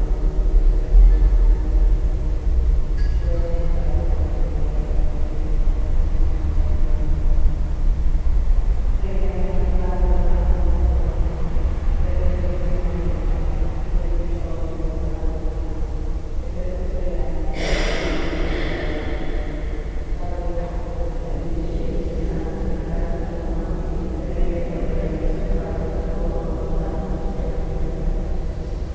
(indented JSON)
{
  "title": "cologne, inside st. severin church",
  "description": "some women (italian?) praying in the empty church. recorded june 4, 2008. - project: \"hasenbrot - a private sound diary\"",
  "latitude": "50.92",
  "longitude": "6.96",
  "altitude": "56",
  "timezone": "GMT+1"
}